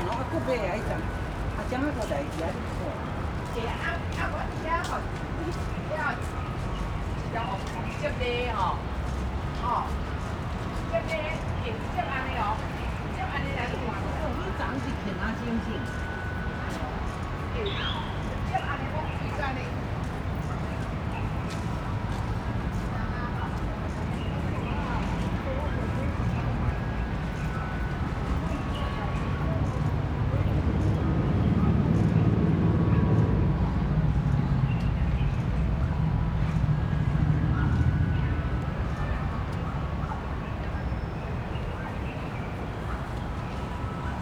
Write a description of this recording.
in the Park, Traffic Sound, birds sound, Zoom H4n +Rode NT4